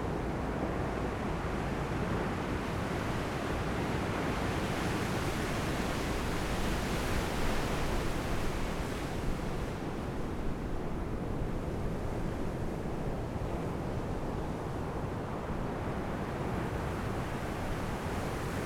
Taitung City, Taiwan - sound of the waves at night
Sitting on the beach, The sound of the waves at night, Zoom H6 M/S
16 January, 18:36